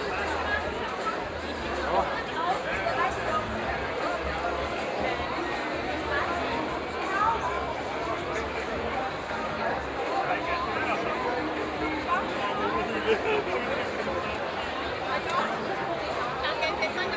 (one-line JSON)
{"title": "Aarau, Night before Maienzug Schweiz - In front of Tuchlaube", "date": "2016-06-30 17:00:00", "description": "A walk through the crowd late afternoon the day before Maienzug, a yearly march of young people dressed in white through the town, you hear music from the bars, people chatting and the shootings of the cannon is also audible.", "latitude": "47.39", "longitude": "8.04", "altitude": "386", "timezone": "Europe/Zurich"}